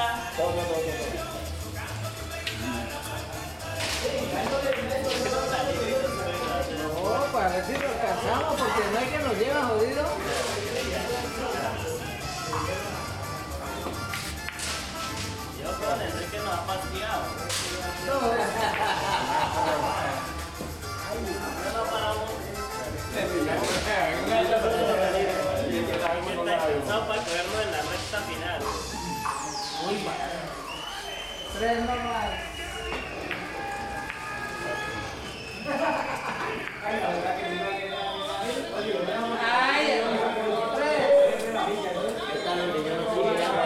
{"title": "Rivera, Huila, Colombia - AMBIENTE BILLAR", "date": "2018-06-20 16:50:00", "description": "GRABACIÓN STEREO, TASCAM DR-40 REALIZADO POR: JOSÉ LUIS MANTILLA GÓMEZ", "latitude": "2.78", "longitude": "-75.26", "altitude": "724", "timezone": "GMT+1"}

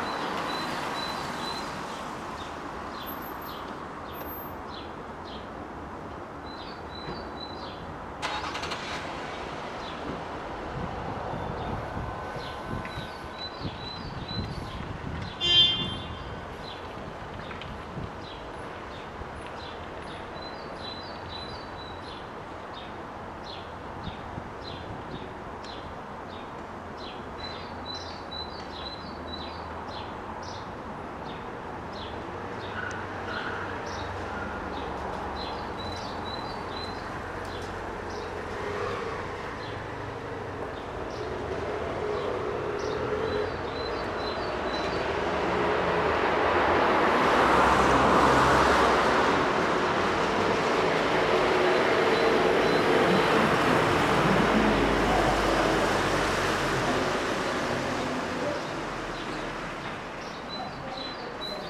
{"title": "Донской пр-д, строение, Москва, Россия - Near the Barber shop Muscovite", "date": "2020-05-16 14:30:00", "description": "2nd Donskoy passage. Near the Barber shop \"Muscovite\". You can hear the birds singing, the car is going, the birds are singing again, the car is going again, someone is Parking, then the car starts, then beeps and other noises of the street. Day. Clearly. Without precipitation.", "latitude": "55.71", "longitude": "37.59", "altitude": "153", "timezone": "Europe/Moscow"}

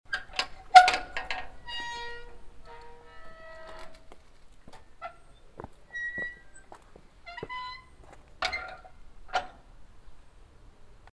{"title": "brandenbourg, graveyard, door", "date": "2011-08-09 15:35:00", "description": "The opening and closing of the iron door to the church and graveyard of the small village.\nBrandenburg, Friedhof, Tor\nDas Öffnen und Schließen der eisernen Pforte zur Kirche und zum Friedhof des kleinen Dorfes.\nBrandenbourg, cimetière, porte\nL’ouverture et la fermeture du portillon métallique qui mène à l’église et au cimetière du petit village.\nProject - Klangraum Our - topographic field recordings, sound objects and social ambiences", "latitude": "49.91", "longitude": "6.14", "altitude": "272", "timezone": "Europe/Luxembourg"}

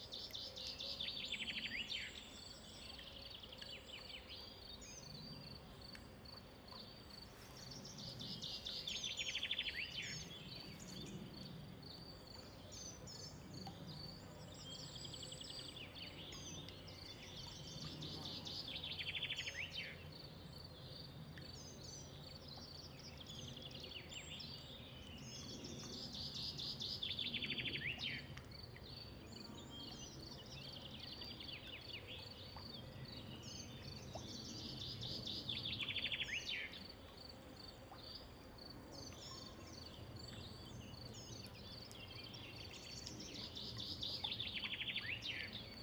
{"title": "Buchenberg, Deutschland - Morgenstimmung, Waldlichtung", "date": "2005-06-29 08:15:00", "description": "Gesumme, Vögel, tropfender Brunnen, Linienflugzeug, I.H. Gebimmel von Kuhglocken.", "latitude": "47.73", "longitude": "10.15", "altitude": "949", "timezone": "Europe/Berlin"}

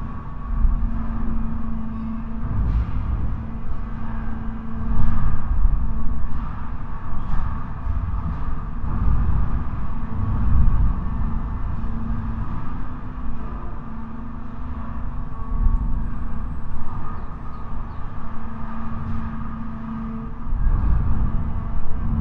{"title": "Staničná, Vráble-Pri stanici, Slovensko - Matador Automotive Sounds", "date": "2021-01-24 15:21:00", "latitude": "48.26", "longitude": "18.30", "altitude": "150", "timezone": "Europe/Bratislava"}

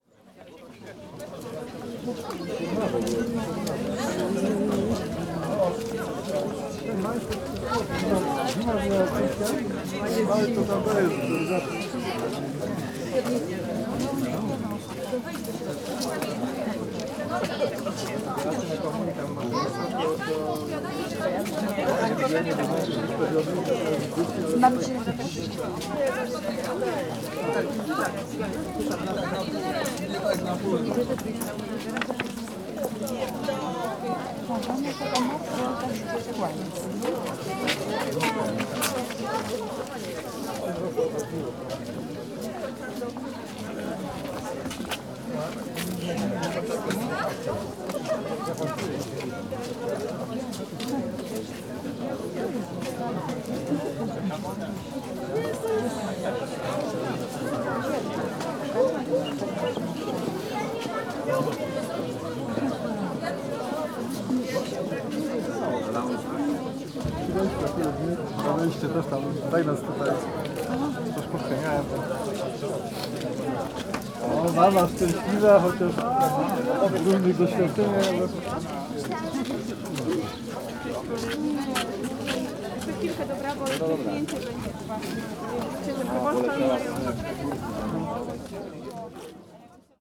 {"title": "Morasko, in front of church - first communion participants", "date": "2014-05-24 12:29:00", "description": "first communion mass is over. family members and guests pour out of the church to wait for their children to exit the church. crowd chatter.", "latitude": "52.49", "longitude": "16.91", "altitude": "116", "timezone": "Europe/Warsaw"}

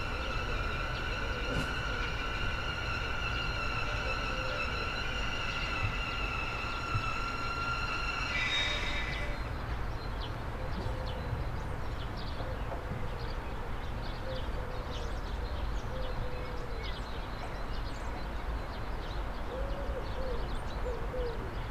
{"title": "Poznan, balcony - grinder", "date": "2016-04-13 11:06:00", "description": "moan of a grinder operating on a deck of a semi-detached house. plane flies over the building. (sony d50)", "latitude": "52.46", "longitude": "16.90", "timezone": "Europe/Warsaw"}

